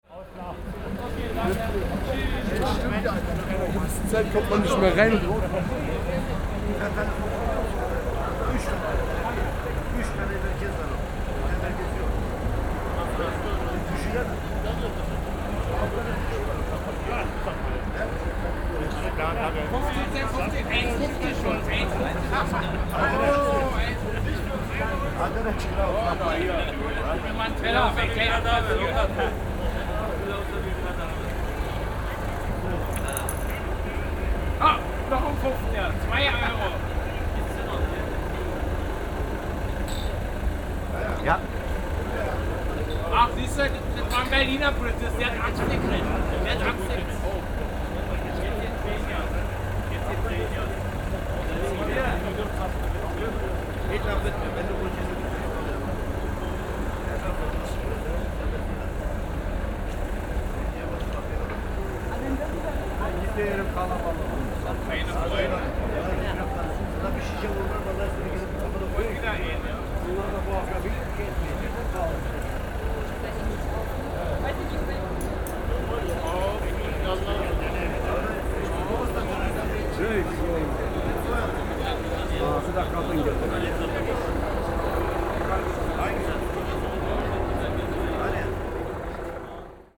kreuzberg, kottbusser str. - 1.mai, strassensperre u. -verkauf / roadblock, street seller
01.05.2009 22:10 strassenverkauf von getränken an einer strassensperre am rande der auseinadersetzungen zum 1.mai in kreuzberg / street selling of drinks at a roadblock close to the kreuzberg mayday conflicts.